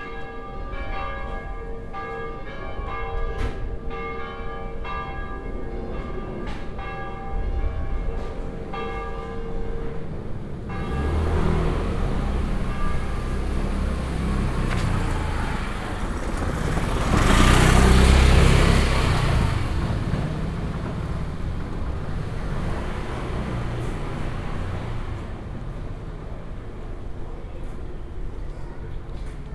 Rome, Trastevere - narrow streets
(binaural recording)
walking around narrow streets and old tenement in Trastevere district. calm Sunday morning. rustle of water spring, church bells, residents conversations flowing out of the windows, roar of scooter elbowing its way through the streets.
Rome, Italy, 31 August 2014, 11:02